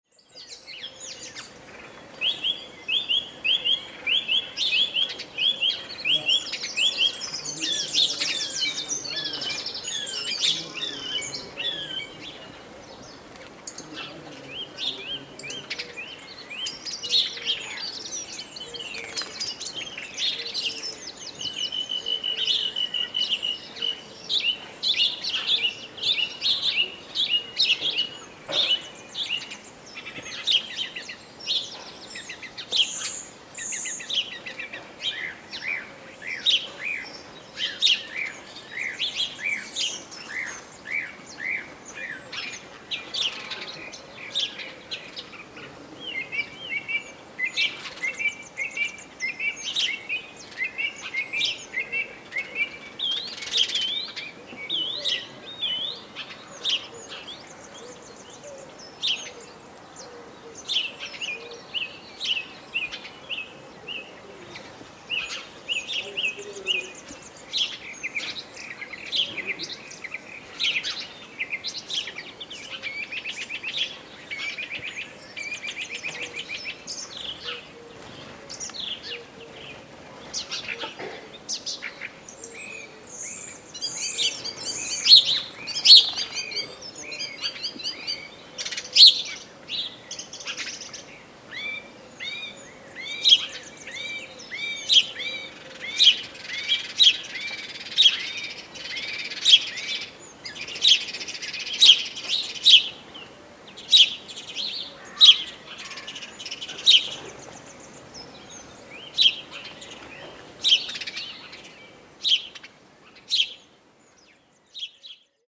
Birds during the covid-19 pandemic, Zoom H6